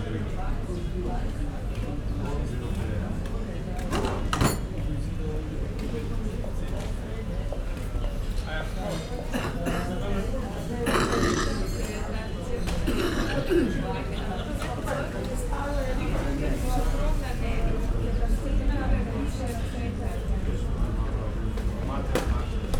Vodnikov Trg, Ljubljana - cafe near market
coffee break at a cafe opposite the market, watching market activity, ambience
(Sony PCM D50, DPA4060)